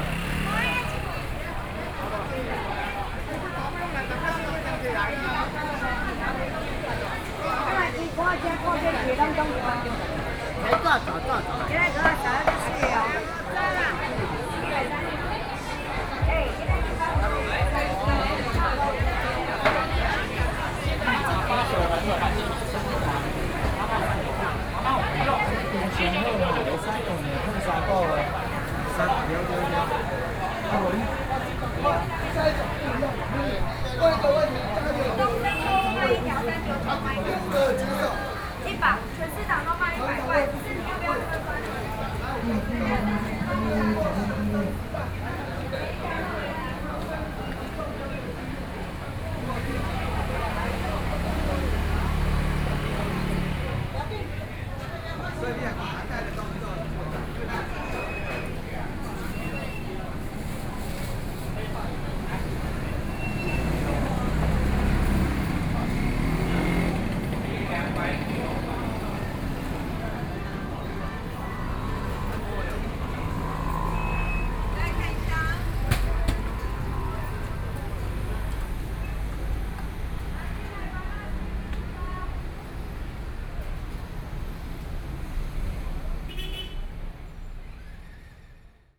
宜蘭市第二(南館)公有零售市場, Yilan City - Walking through the traditional market
Walking through the traditional market, From the ground floor, To the ground floor, Then went outside outdoor market
Sony PCM D50+ Soundman OKM II